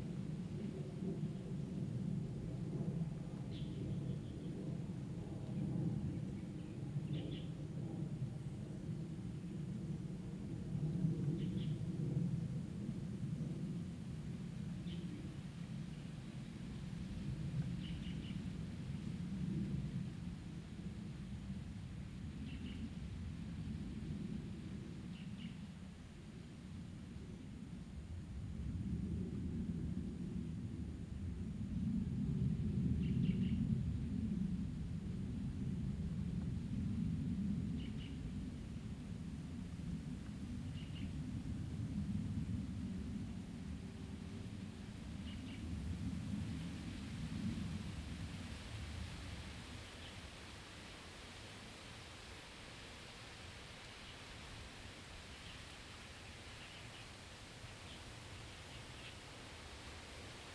大富村, Guangfu Township - Small village
Birdsong, Traffic Sound, Next to farmland, The sound of distant aircraft, Small village
Zoom H2n MS+ XY
Hualien County, Taiwan, 8 October 2014, 15:29